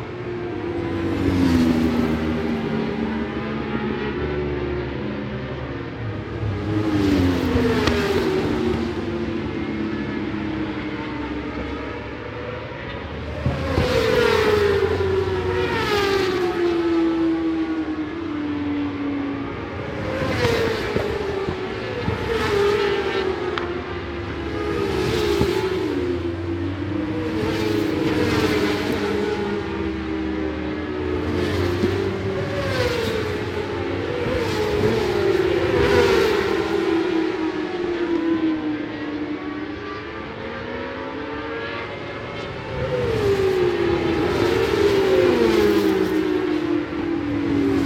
Scratchers Ln, West Kingsdown, Longfield, UK - British Superbikes 2005 ... FP1(contd) ...
British Superbikes 2005 ... free practice one(contd) ... the Desire Wilson stand ... one point stereo mic to minidisk ...